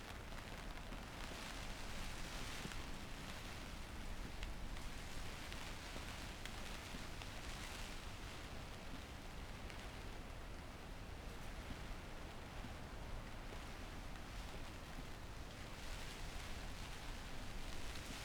Chapel Fields, Helperthorpe, Malton, UK - inside polytunnel ... outside storm ...
inside polytunnel outside storm ... dpa 4060s on pegs to Zoom H5 clipped to framework ...